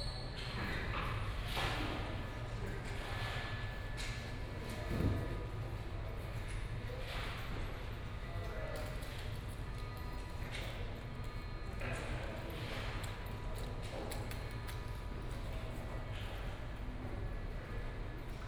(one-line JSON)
{"title": "Art Center, Kaohsiung City - Construction", "date": "2014-05-21 17:30:00", "description": "Construction of the exhibition\nSony PCM D50+ Soundman OKM II", "latitude": "22.62", "longitude": "120.28", "altitude": "1", "timezone": "Asia/Taipei"}